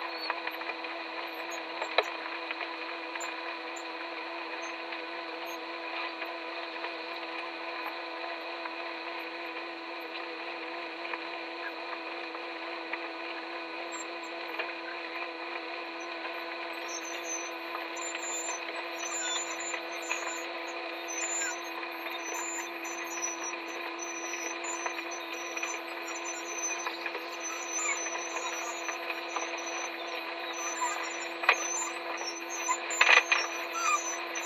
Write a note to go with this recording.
Mine 7 is the only active mine in Longyearbyen and provides the town with coal. The recordings are from in the mine. The noise level inside is immense and I recorded by using contact mics on the different infrastructure connected to the machinery. The field recording is a part of The Cold Coast Archive.